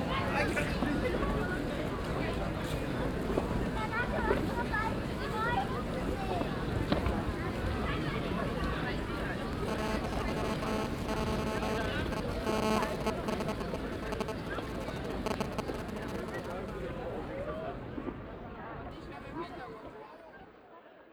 Neuss, Deutschland - museums island hombroich, langen founation, sky event
On the meadow in front of the Langen Foundation during the sky event of Otto Piene. The sound of people walking and talking while the event preparation - long helium filled plastic tubes lifted in the air swinging in the wind and the sound as a new tubes gots filled with helium. In beween distorton signals by mobile phones.
soundmap d - social ambiences, topographic field recordings and art spaces
Neuss, Germany, 9 August 2014, ~15:00